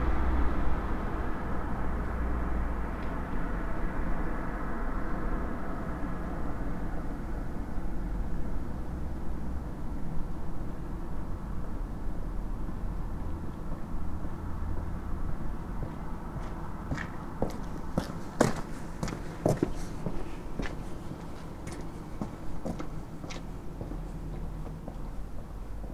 Berlin: Vermessungspunkt Friedelstraße / Maybachufer - Klangvermessung Kreuzkölln ::: 22.02.2013 ::: 02:44
Berlin, Germany, 22 February